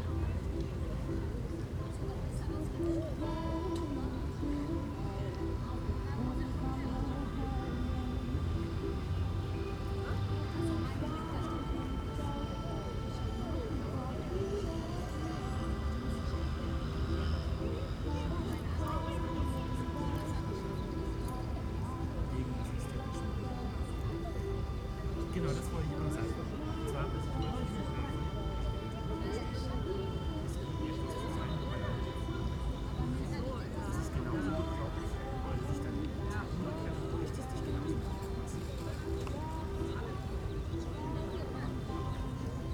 berlin, landwehrkanal, urbanhafen
saturday evening ambience at urbahnhafen, landwehrkanal, berlin
Berlin, Germany